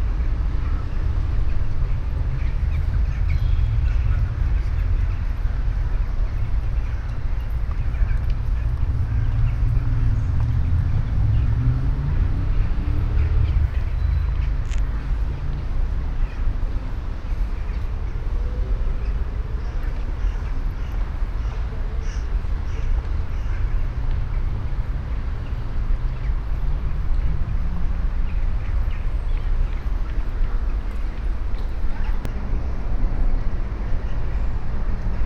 luxembourg, under pont adolphe
Standing under a high valley brigge. The constant sound of the distant traffic above, an ambulance with horn passing by and the sound of big groups of crows that gather in a tree nearby. In the end a big group of crows flying away.
international city scapes - topographic field recordings and social ambiences
18 November